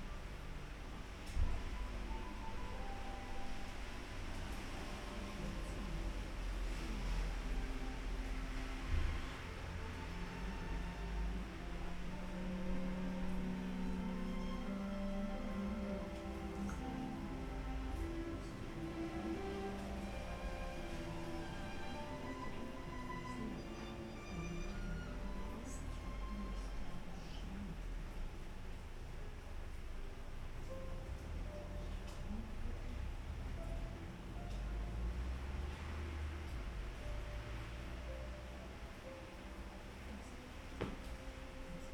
a quiet evening, sounds from the street, something is present. beethoven trio playing in the back.
Berlin, Deutschland, 17 July 2011